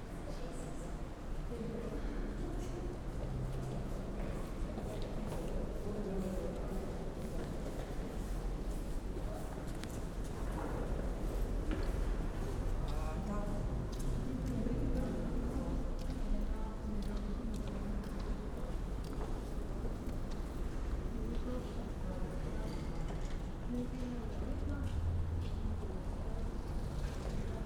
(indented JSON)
{
  "title": "Ljubljana main station - walk through pedestrian tunnel",
  "date": "2012-11-07 14:50:00",
  "description": "walk in pedestrian tunnel at Ljubljana main station\nSony PCM D50, DPA4060)",
  "latitude": "46.06",
  "longitude": "14.51",
  "altitude": "297",
  "timezone": "Europe/Ljubljana"
}